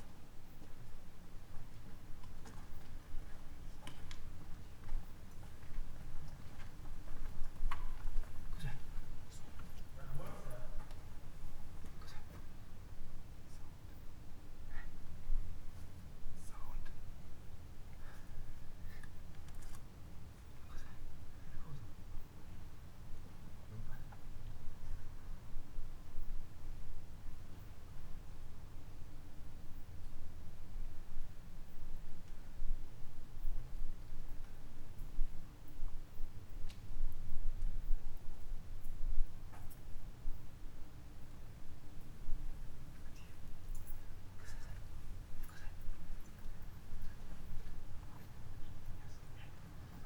via San Vito, Trieste, Italy - small window with riped safety net
whispering voices ... wondering why there is someone standing still, close to small window, with hand, silently projected inside ... discussing dilemma on what kind of sounds are almost inaudible